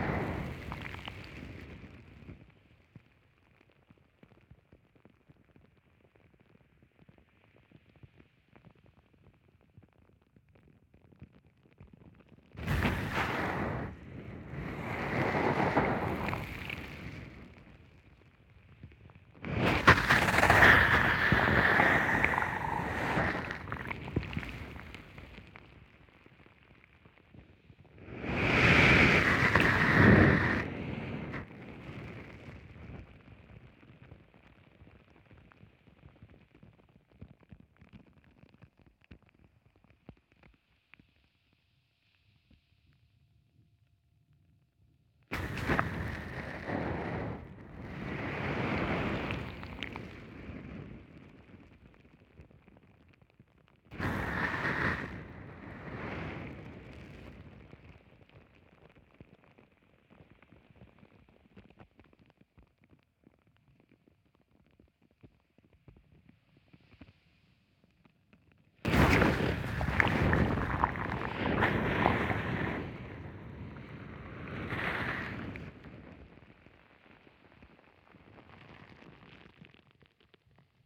{"title": "Av. de Bonne Source, Pornichet, France - Waves [c-mics]", "date": "2020-05-22 18:43:00", "description": "Waves recorded in stereo with two micro contacts and an H4n. The tide was rather calm. Because of the current, you can hear the micro contacts being carried in the waves and in the sand.", "latitude": "47.25", "longitude": "-2.33", "altitude": "4", "timezone": "Europe/Paris"}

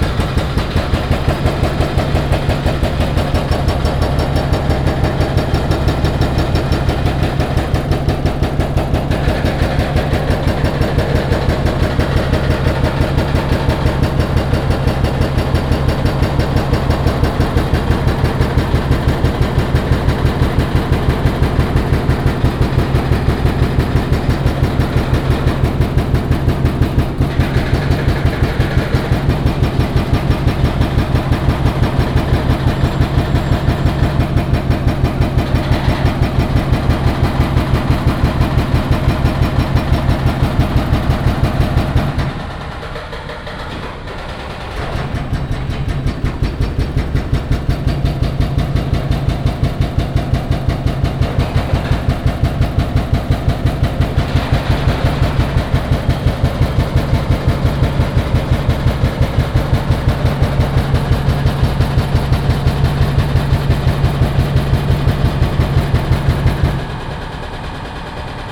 {"title": "Kaohsiung Station, 高雄市三民區 - Construction sound", "date": "2018-03-30 08:55:00", "description": "At the station square, Construction sound", "latitude": "22.64", "longitude": "120.30", "altitude": "9", "timezone": "Asia/Taipei"}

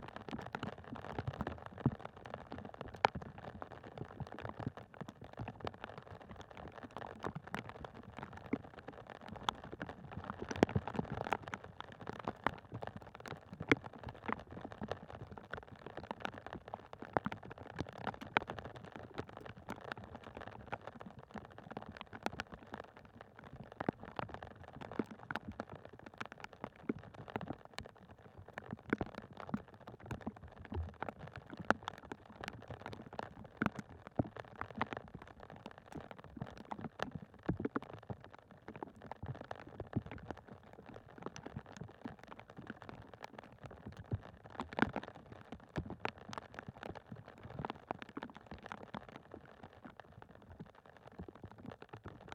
{"title": "Utena, Lithuania, rain on a sheet of ice", "date": "2021-03-13 14:30:00", "description": "Rainy, windy day. Some ice sheets left in flooded meadow. contact microphones on ice.", "latitude": "55.52", "longitude": "25.58", "altitude": "96", "timezone": "Europe/Vilnius"}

